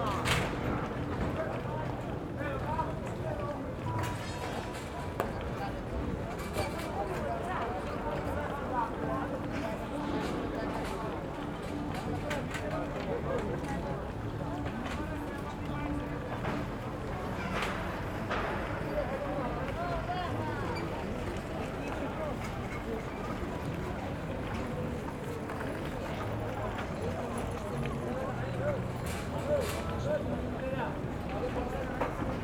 Piazza Campo de Fiori, Roma RM, Italy - Closing market at Campo deFiori
Market closing, pedestrians
Fin de marché, passants